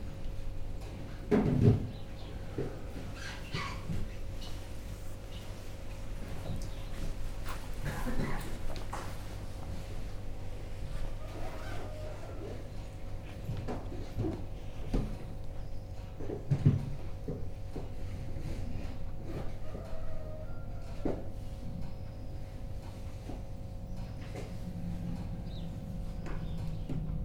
Unnamed Road, Dorchester, UK - New Barn Morning Meditation Pt3
This upload captures the end of the morning sitting, the bells sounding to invite participants to stand, bow and leave the room together. Participants are in noble silence as they leave (a period of silence lasting from the evening sitting meditation at 8.30pm to breakfast at 8.30am). (Sennheiser 8020s either side of a Jecklin Disk on a SD MixPre6)